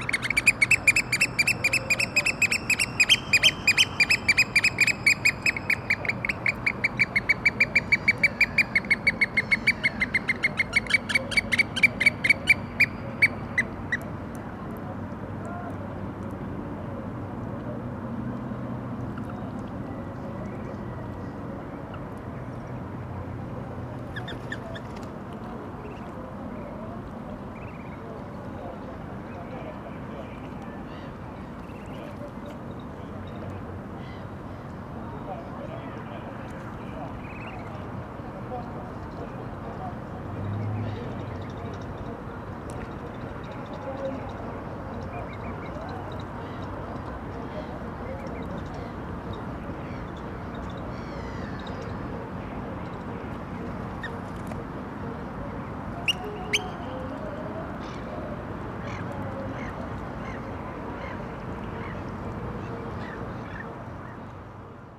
night sounds in boat marina, Helsinki
recorded during the emporal soundings workshop